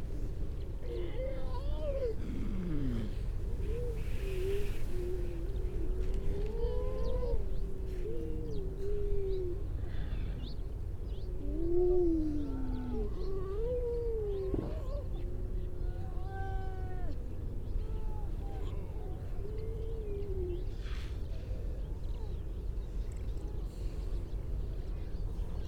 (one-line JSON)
{"title": "Unnamed Road, Louth, UK - grey seals soundscape ...", "date": "2019-12-03 10:08:00", "description": "grey seals soundscape ... generally females and pups ... bird calls ... pied wagtail ... starling ... chaffinch ... pipit ... robin ... redshank ... crow ... skylark ... curlew ... all sorts of background noise ...", "latitude": "53.48", "longitude": "0.15", "altitude": "1", "timezone": "Europe/London"}